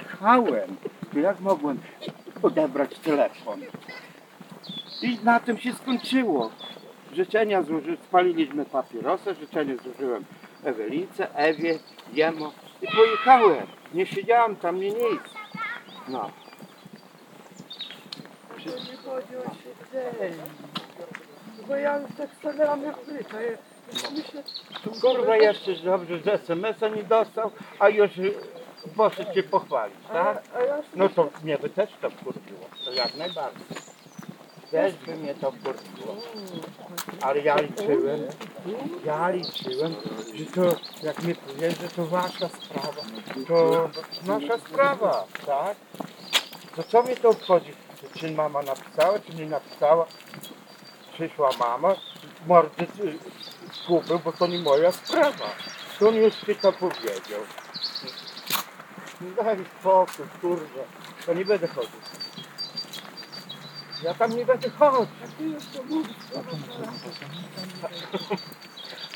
Hill park, Przemyśl, Poland - (110 BI) Eavsedropping Easter story
Binaural recording of two persons talking about Easter family encounters. The story doesn't contain any details about its actors, making it pretty absorbing, while being unharmful to its participants.
Recorded with Soundman OKM on Sony PCM D100